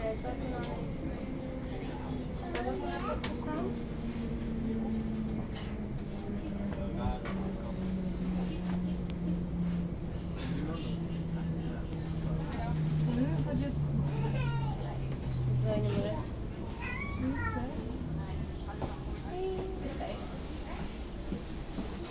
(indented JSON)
{
  "title": "Delft, Nederland - In the Sprinter",
  "date": "2012-11-06 15:40:00",
  "description": "Inside the Sprinter train between Rotterdam, Delft and Den Haag.\n(Zoom H2 internal mics)",
  "latitude": "52.00",
  "longitude": "4.36",
  "altitude": "2",
  "timezone": "Europe/Amsterdam"
}